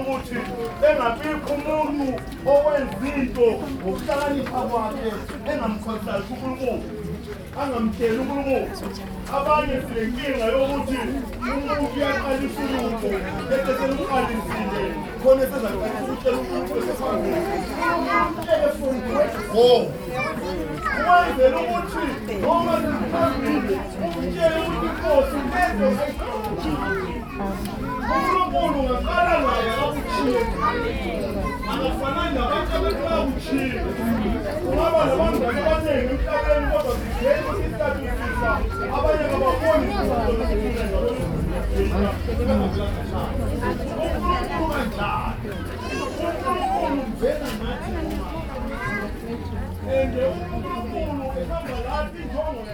… a few minutes from a long speech by a local pastor…